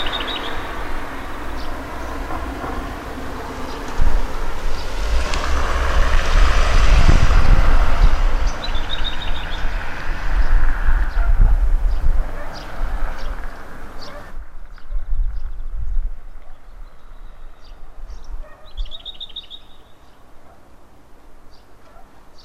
Olsztyn, Deszcz z brzozą - Suburb street
Olsztyn, Poland, 28 April 2007